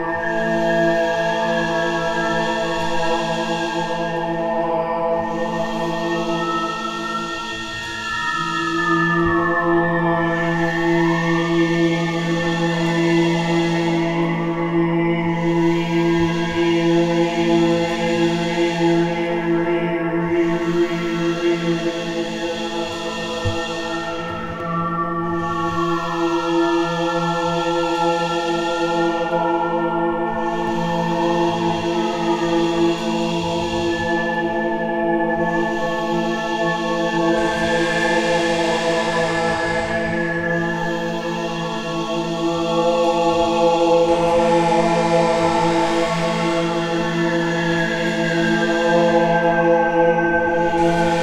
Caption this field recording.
Here is a recording of a voice piece that took place at the Drewelowe Gallery in the Visual Arts Building. This recording was recorded on a Tascam DR-100MKIII